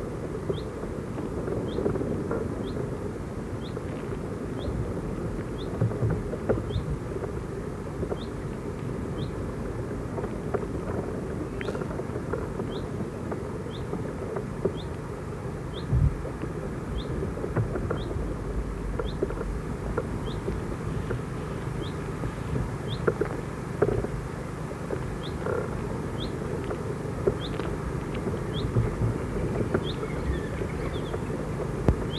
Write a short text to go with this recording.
A hydrophone in a small water filled hollow in the trunk of a small tree between two branches. You can hear the movement of the branches as they sway in a light breeze.